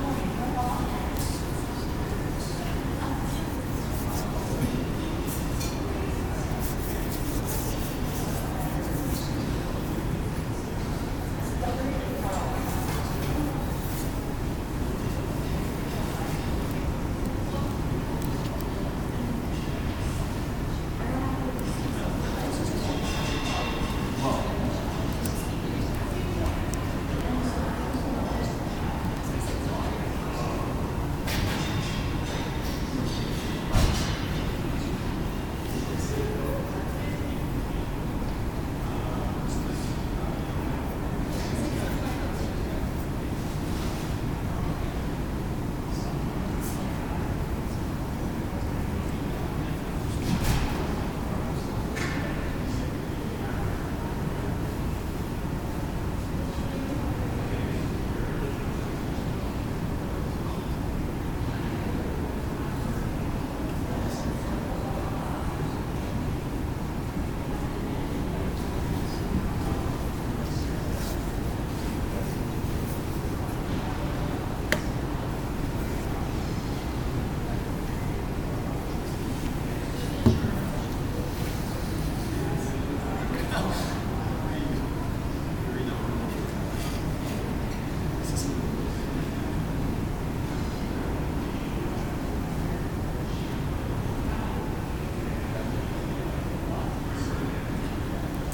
St NW, Edmonton, AB, Canada - The Hub-Bub of HUB-Mall
This is a sonic photograph taken from HUB Mall at the University of Alberta. It's nothing special. It's simply students passing by and chatting with friends, maybe inhaling some coffee before the next class. I wanted to give a sample of the everyday life here in Edmonton. The recording is done from an online D.A.W. and might be poor, but I'm saving up to get a good audio recorder. This is to be the first of several samples that I'll will upload until I see fit.
Thank you, Professor, for introducing me to this wonderful site.